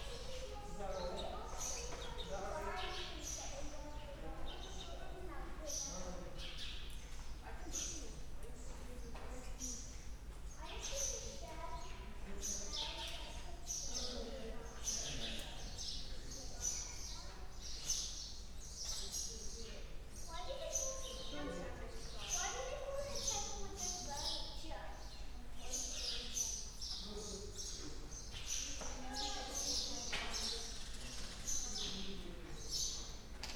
L-Mnajdra temple, Malta - sparrows under tent
L-Mnajdra temple, Malta, the place is covered by a big tent, which protects not only the temple against erosion, but also gives shelter to many sparrows.
(SD702, DPA4060)
Qrendi, Malta